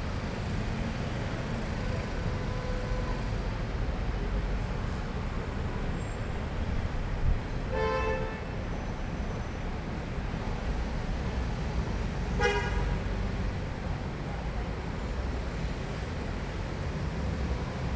{
  "title": "Rue el Oraibi Jilali, Casablanca, Morocco - Hôtel les Saisons - Chambre 610",
  "date": "2018-03-28 22:30:00",
  "description": "Bruits de la rue, saisi du 6ème étage. Enregistreur en équilibre sur le balcon.",
  "latitude": "33.60",
  "longitude": "-7.62",
  "altitude": "22",
  "timezone": "Africa/Casablanca"
}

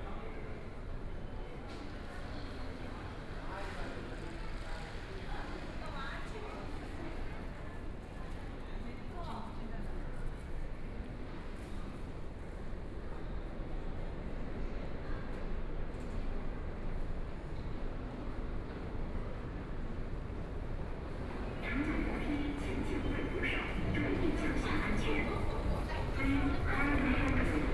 {
  "title": "East Nanjing Road Station, Shanghai - Towards the station exit",
  "date": "2013-12-03 15:20:00",
  "description": "From the station platform began to move toward the station exit, Binaural recording, Zoom H6+ Soundman OKM II",
  "latitude": "31.24",
  "longitude": "121.48",
  "altitude": "9",
  "timezone": "Asia/Shanghai"
}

